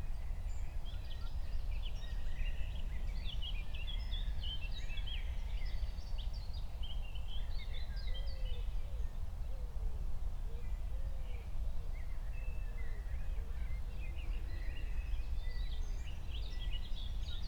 07:00 Berlin, Buch, Mittelbruch / Torfstich 1
19 June, 07:00